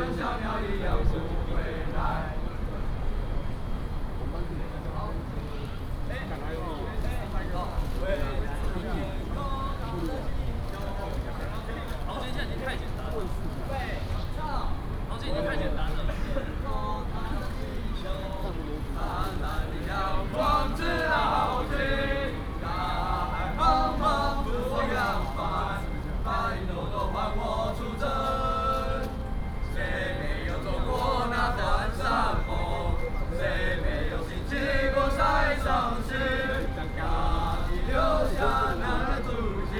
In the square outside the station, A group of soldiers singing